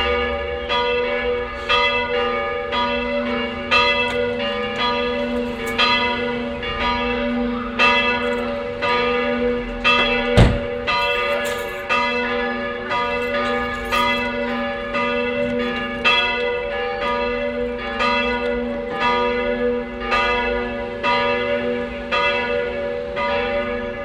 Stoppenberg, Essen, Deutschland - essen, stooenberg, st. nikolaus church, bells
An der St, Nikolaus Kirche. Die 12 Uhr Stundenglocke und anschließend das lange 12 Uhr Geläut gepaart mit den Glocken der unweiten Thomaskirche. Gegen Ende Anfahrt und Parken eines getunten Pkw's.
At the St. Nikolaus Church. The sound of the 12 0 clock hour bell plus the bells of the nearby Thomas church. At the end he sound of a tuned car driving close and parking.
Projekt - Stadtklang//: Hörorte - topographic field recordings and social ambiences
Essen, Germany